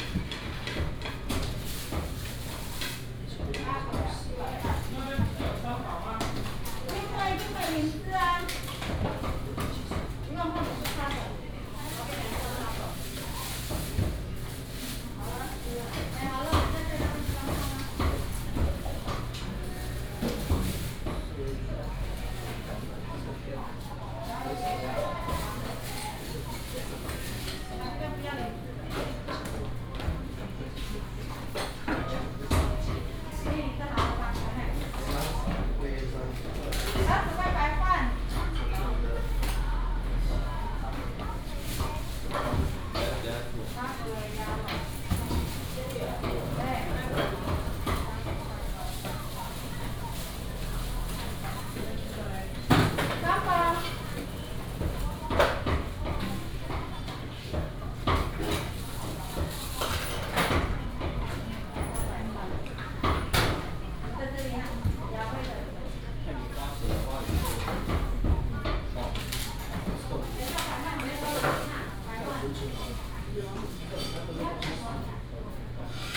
Gongzheng St., Fenglin Township - Inside the restaurant
Inside the restaurant
Binaural recordings
Fenglin Township, Hualien County, Taiwan, December 2016